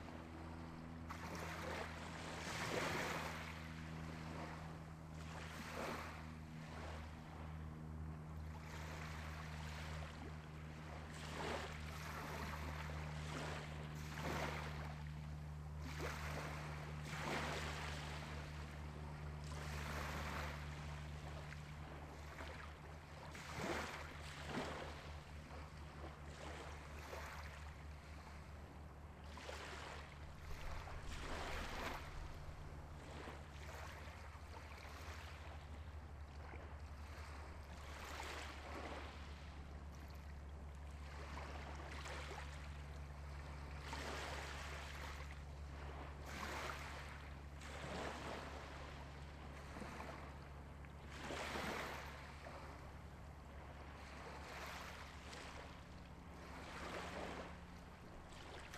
Point Molate beach, now closed to the public, pretty spot with nice views of Richmond bridge, very polluted .. I am cleaning this beach for few months now and it really made me think of importance of clean environment..... I like this recording of waves for changes in their tempo and made me think of making series of such long recordings of waves hitting a shore... Please, help to clean our planet....
21 March 2011, 02:21